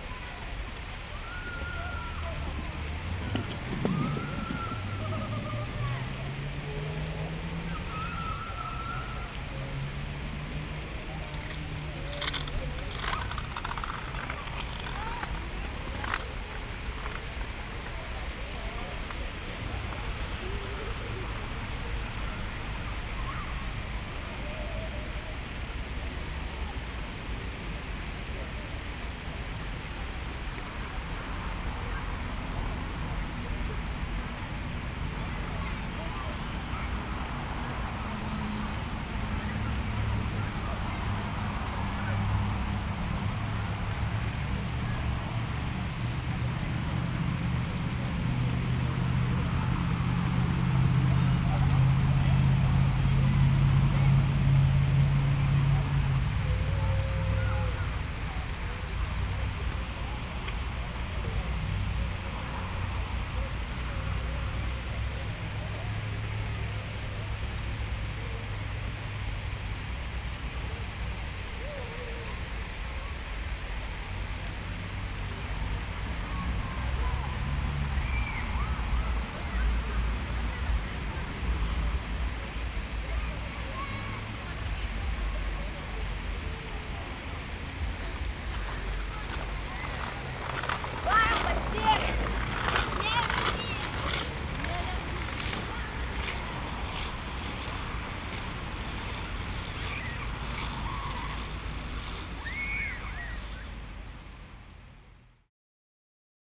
Ventspils, Latvia, at central fountain
Big "sea boat" type fountain with kids playing in it.
13 July 2021, ~21:00